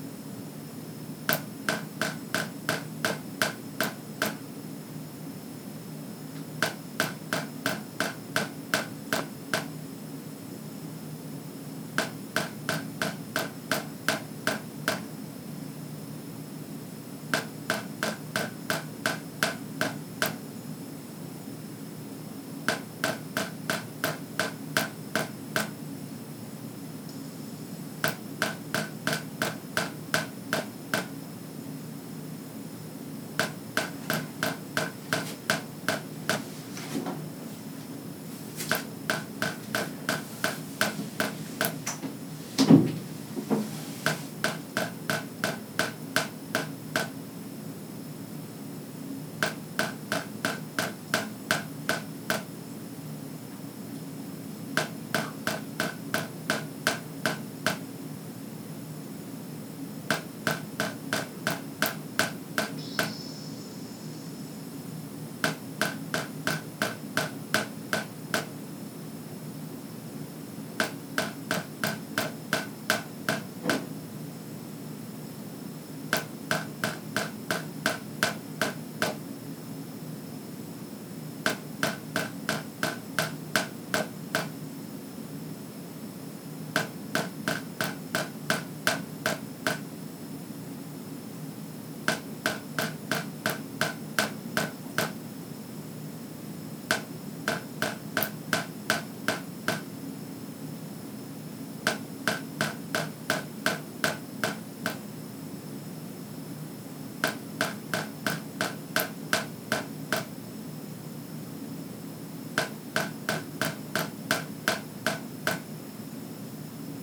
Pensione Popolo, Montreal, QC, Canada - Late night tap drip at Pensione Popolo
Just a tap dripping into the kitchen sink in Pensione Popolo's large suite.